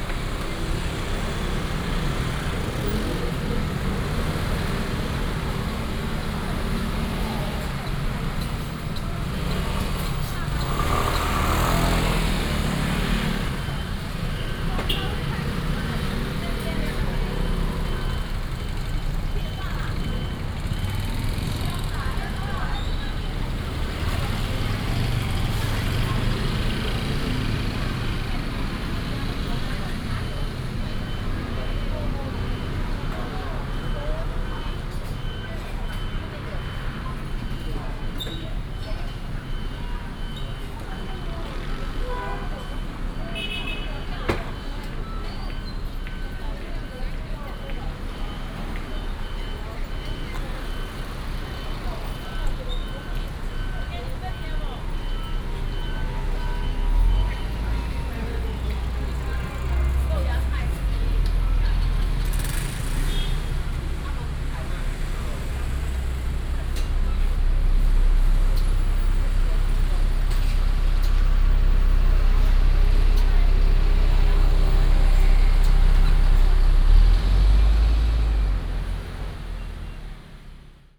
Traditional market, In the market entrance area, Traffic sound